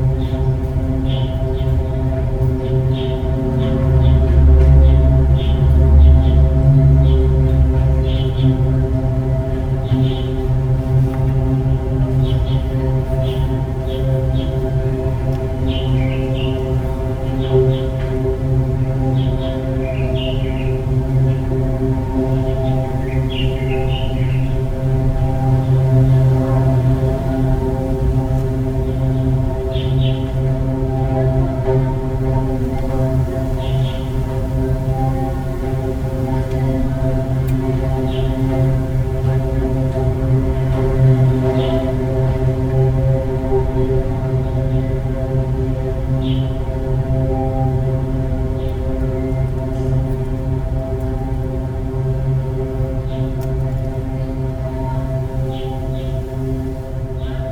112台灣台北市北投區學園路1號國立臺北藝術大學圖書館 - the sound around the pond
the pipe in water (recorded in a part which above the water)